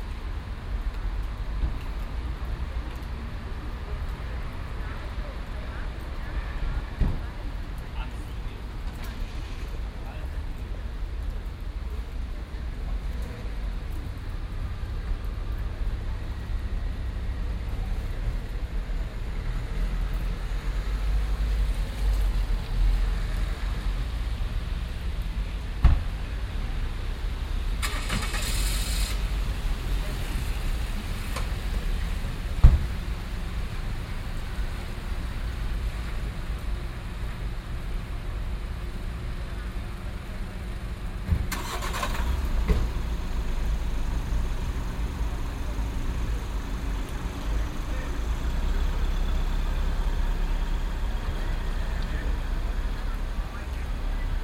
Löhrrondell, square, Koblenz, Deutschland - Löhrrondell 4
Binaural recording of the square. Fourth of several recordings to describe the square acoustically. Voices, rain, cars, honking, people shouting goodby (bis Montag), the recording is made on a friday.
Koblenz, Germany